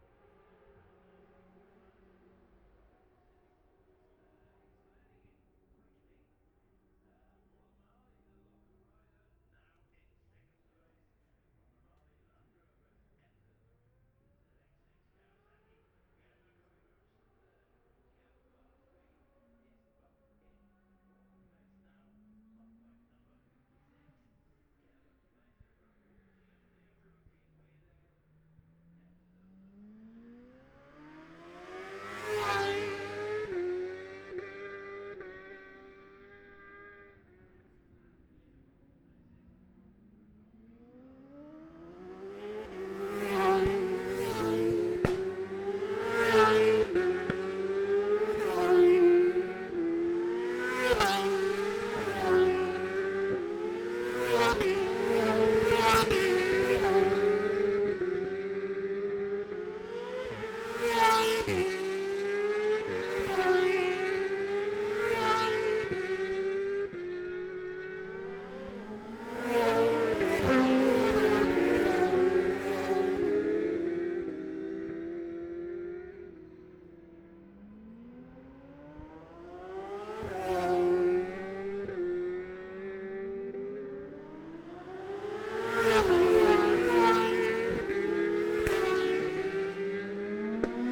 Jacksons Ln, Scarborough, UK - olivers mount road racing 2021 ...
bob smith spring cup ... 600cc group B qualifying ... luhd pm-01 mics to zoom h5 ...
22 May 2021